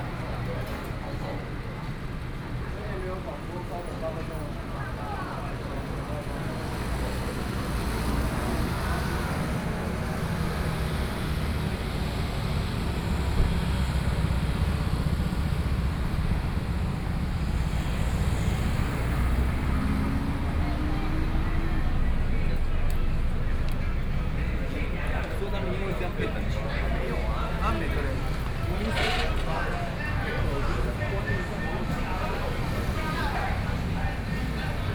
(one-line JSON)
{"title": "前鎮區盛豐里, Kaohsiung City - In front of the coffee shop", "date": "2014-05-14 23:14:00", "description": "In front of the coffee shop, Traffic Sound", "latitude": "22.61", "longitude": "120.31", "altitude": "21", "timezone": "Asia/Taipei"}